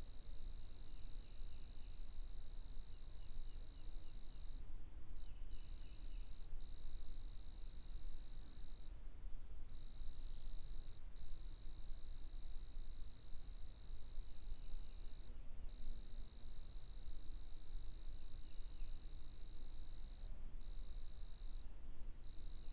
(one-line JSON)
{"date": "2022-04-14 15:45:00", "description": "The sounds of the Holla Bend National Wildlife Refuge\nRecorded with a Zoom H5", "latitude": "35.14", "longitude": "-93.08", "altitude": "104", "timezone": "America/Chicago"}